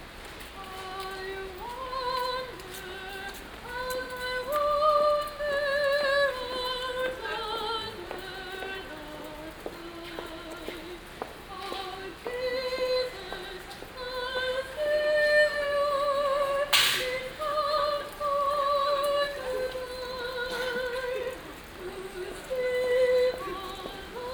berlin, friedrichstr. - singer in front of bookstore
afternoon, crowded, christmas singer in front of dussmanns book store. steps. coins in tin can.